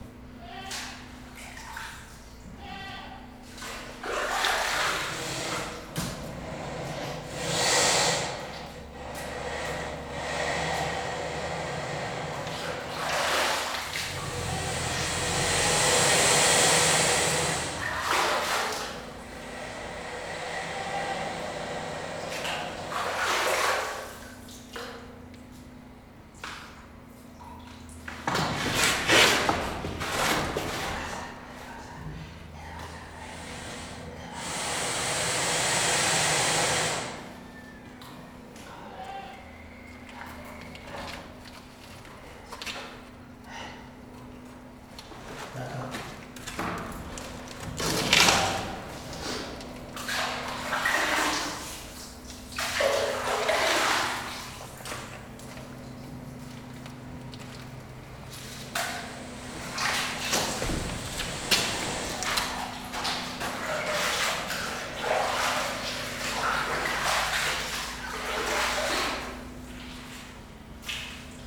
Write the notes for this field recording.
El silencioso cocodrilo del petit zoo produce a veces una especie de bufido repentino. También como respuesta cuando se trata de interaccionar con él.